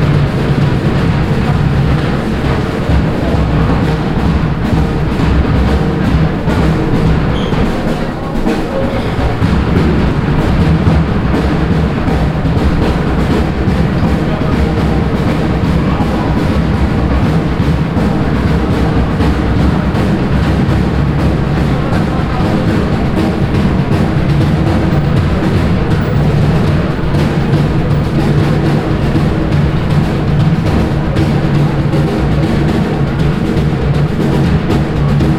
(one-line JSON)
{"title": "vianden, castle terrace, drum corp", "date": "2011-08-09 21:09:00", "description": "An extened recording of a drum corp performing on the castles terrace while other performer throw and juggle with flags accompanied by some audience reactions. Recorded during the annual medieval festival of the castle.\nVianden, Schlossterrasse, Trommler\nEine längere Aufnahme von Trommlern, die auf der Schlossterrasse auftreten, während andere Künstler mit Flaggen jonglieren, einige Zuschauerreaktionen. Aufgenommen während des jährlichen Mittelalterfestes im Schloss.\nVianden, terrasse du château, joueurs de tambours\nUn long enregistrement d’un groupe de joueurs de tambour sur la terrasse du château tandis que d’autres artistes jonglent avec des drapeaux accompagnés par les réactions du public. Enregistré lors du festival médiéval annuel au château.\nProject - Klangraum Our - topographic field recordings, sound objects and social ambiences", "latitude": "49.94", "longitude": "6.20", "altitude": "291", "timezone": "Europe/Luxembourg"}